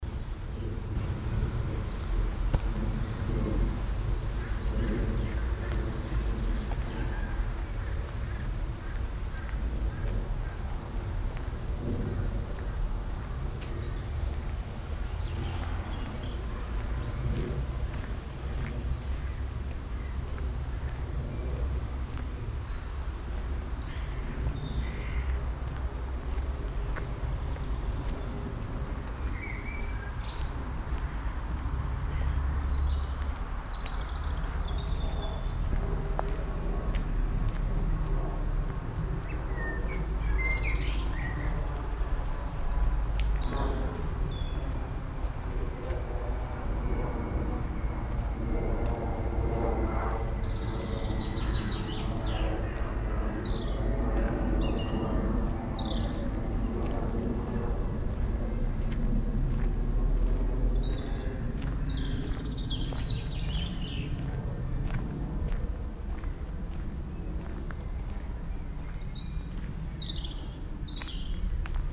overath, immekepplerteich, small bridge, bells
früher abend, gang durch kleinen wald zu brücke über kleinen fluss, flugzeugüberflug, kirchglocken
soundmap nrw - social ambiences - sound in public spaces - in & outdoor nearfield recordings